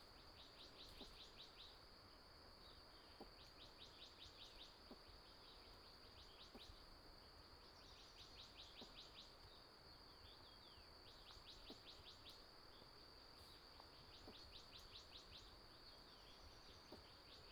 達保農場, 達仁鄉台東縣 - early morning
early morning, Bird cry, Stream sound
Taitung County, Taiwan, 6 April 2018